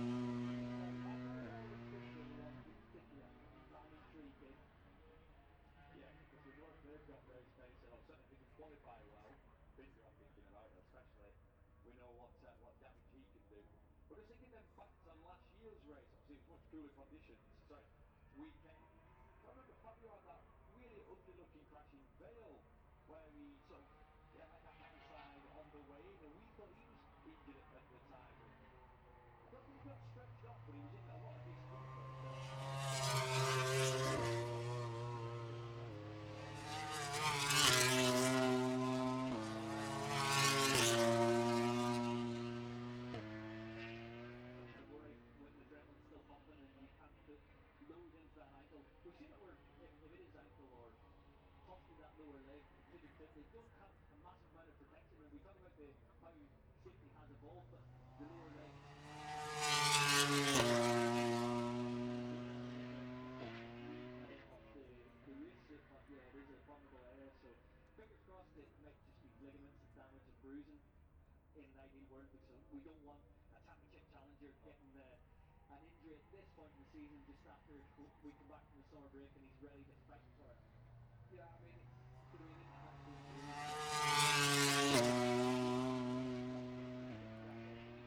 Silverstone Circuit, Towcester, UK - british motorcycle grand prix 2022 ... moto grand prix ......
british motorcycle grand prix 2022 ... moto grand prix free practice four ... outside of copse ... dpa 4060s clipped to bag to zoom h5 ...